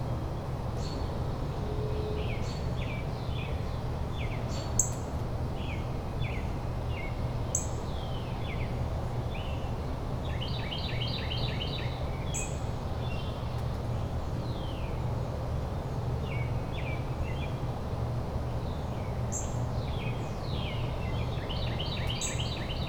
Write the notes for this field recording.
The birds in this area are particularly active from around 4:30 onward. I'm not normally up at this time, but I had a very late night so I decided to go out and record them. I don't think I ever realized just how loud the birds were in the early morning hours before I took this recording. The recording was made with a Tascam DR-100 Mkiii and a custom wind reduction system.